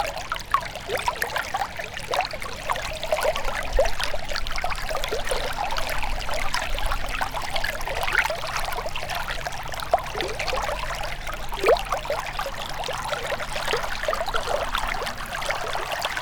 river Drava, Dvorjane - alluvial tree waves